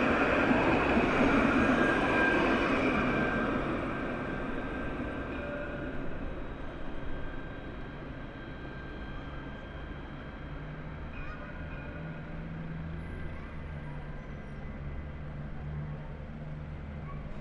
Urban train passing and sound signals, traffic, people. Recorded with a AT BP4025 into a SD mixpre6.